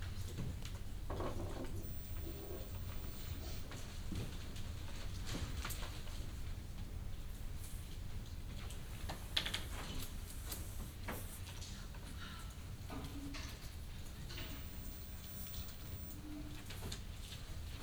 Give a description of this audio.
Quiet sounds in the reading room of the Central Library in The Hague. Binaural recording.